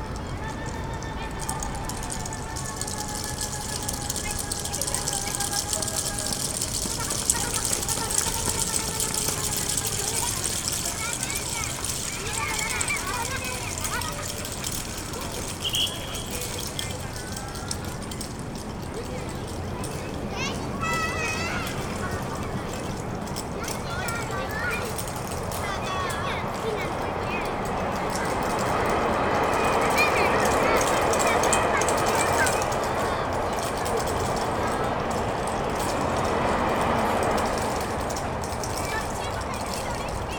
Tokyo, Koto - children excercise
school children exercise to music, running around the school yard with toy clappers (roland r-07)
Koto, 亀戸2丁目5−7